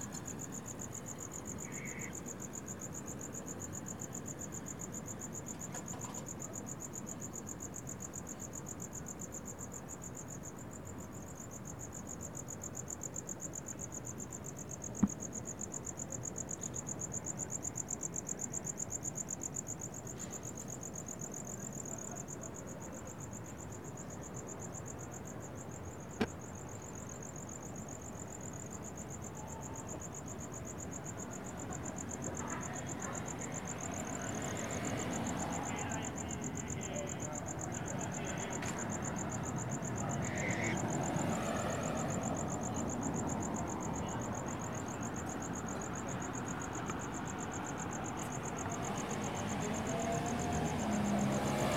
Sabado Tarde Rd, Goleta, CA, USA - Sabado at Night
Recording taken at night on Sabado Tarde Road. Crickets and some sort of bird can be heard as well as the street activity such as bicyclists riding by.
Santa Barbara County, California, USA, 22 October 2019